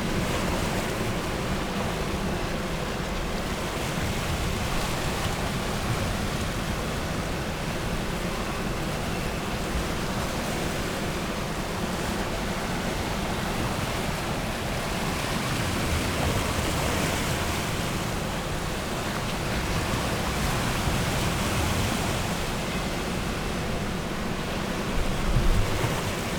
east pier ... outgoing tide ... lavalier mics on T bar on fishing landing net pole ... over the side of the pier ...
Battery Parade, Whitby, UK